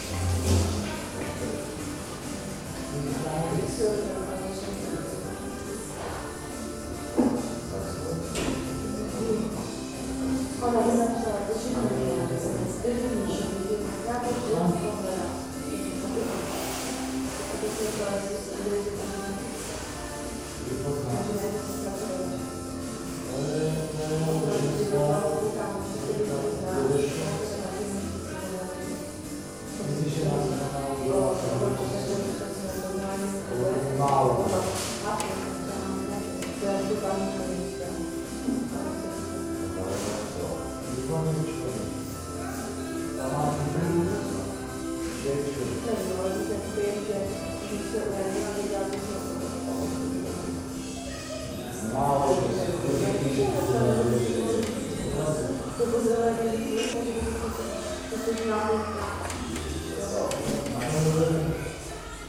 Restaurace U Nádraží, Třída Míru 2, 38101 Český Krumlov
2 August, 21:00